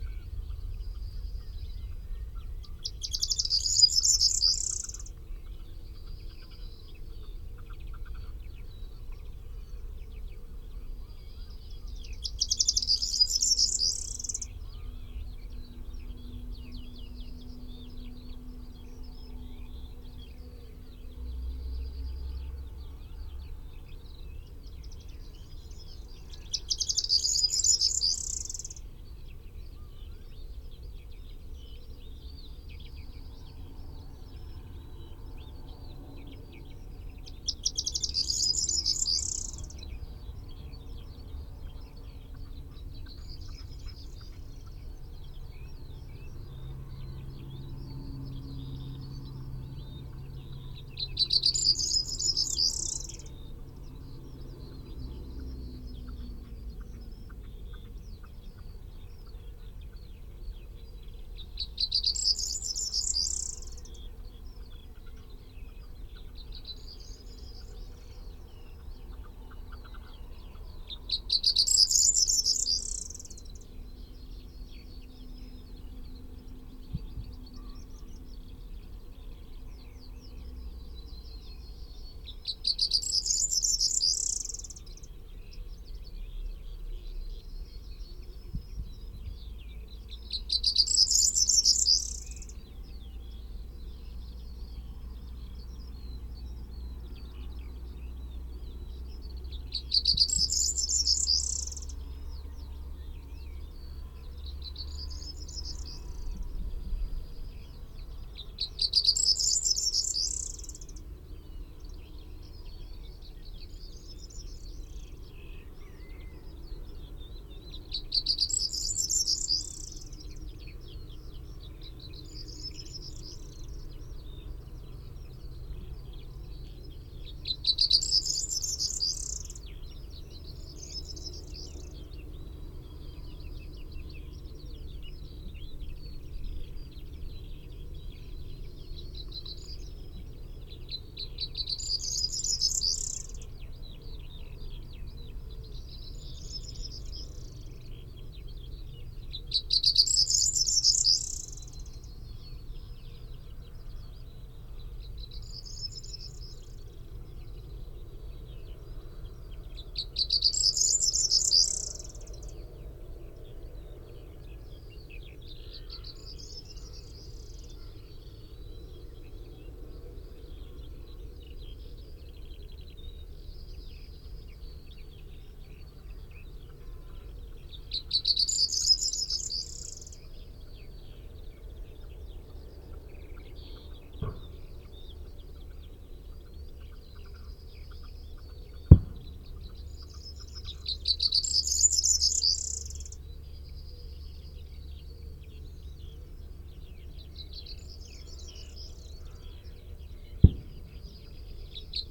Green Ln, Malton, UK - Skylarks ... Corn buntings ... soundscape ...
Skylarks ... Corn buntings ... soundscape ... parabolic to minidisk ... song and calls from ... lapwing ... crow ... pheasant ... background noise ... traffic ... bird scarers ...